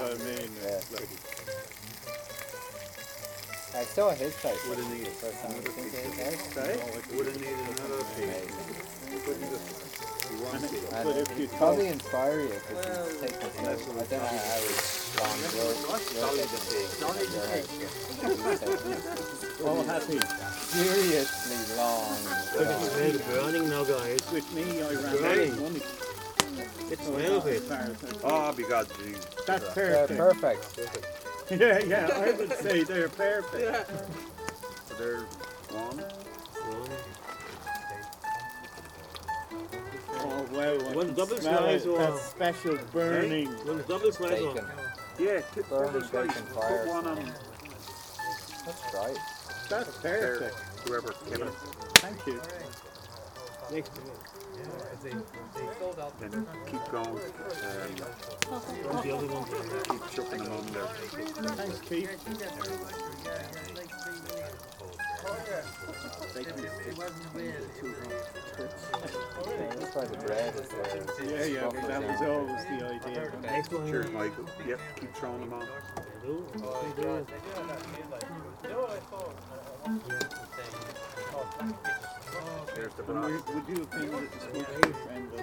Meat sizzling on the fire, chatter around the fire.